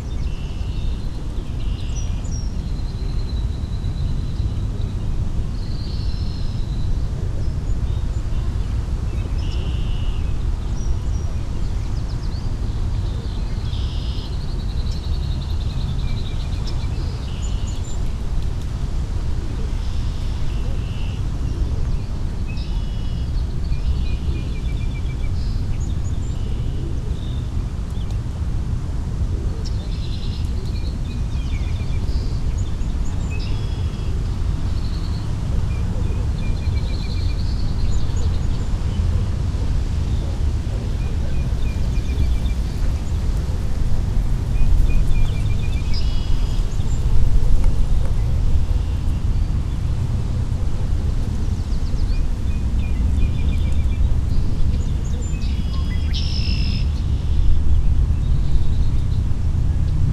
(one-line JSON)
{"title": "Villeray—Saint-Michel—Parc-Extension, Montreal, QC, Canada - Parc Jarry", "date": "2022-05-20 10:03:00", "description": "Recorded with Usi Pro at Parc Jarry with Zoom F3", "latitude": "45.54", "longitude": "-73.63", "altitude": "51", "timezone": "America/Toronto"}